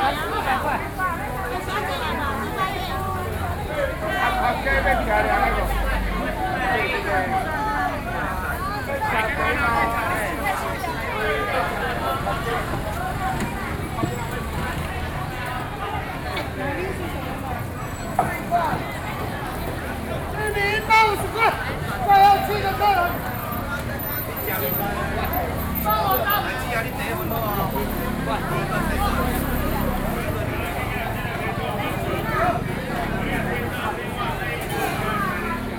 Ln., Sec., Xi’an St., Beitou Dist., Taipei City - Traditional markets